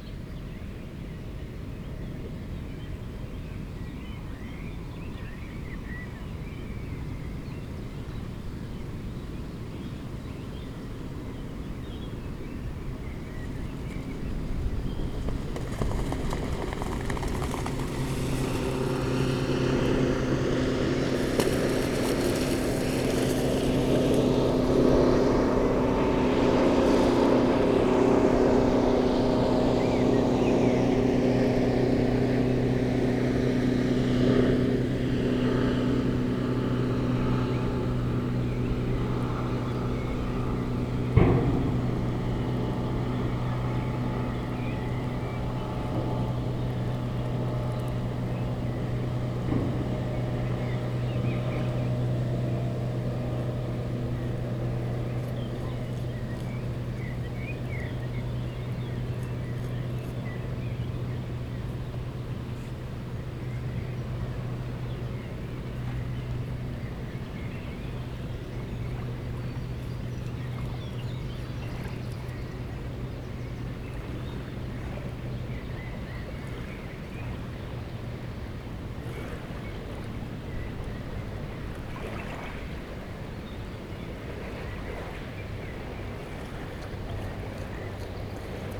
Strandbadweg, Mannheim, Deutschland - Strandbad Ambiente
Strandbad, Rhein, Schiffe, Gänse mit Jungtieren, Wellen, Wind, Vögel, urbane Geräusche